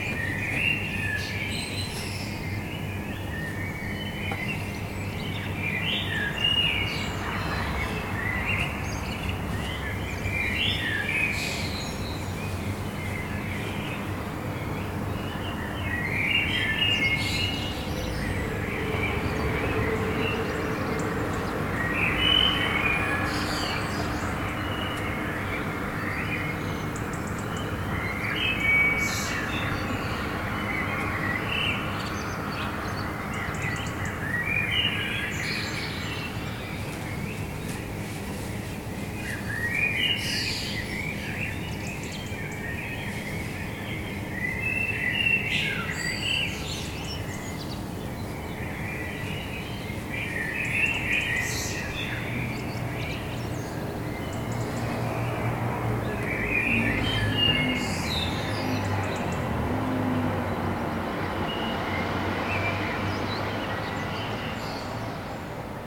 Chaumière, Toulouse, France - Dawn Chorus 02
bird song, city noise, metro .
Captation : ZOOMh4n